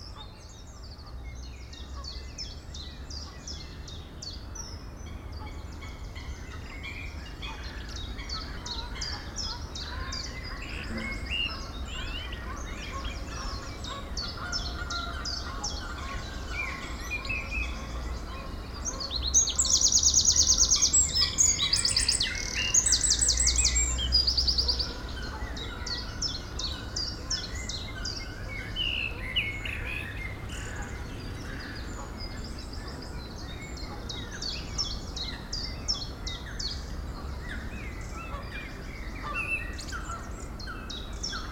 Huldenberg, Belgium - Grootbroek swamp
Grootbroek is a swamp and a pond, located in Sint-Agatha-Rode and Sint-Joris-Weert. Into the swamp, distant noise of the pond, and a blackbird singing.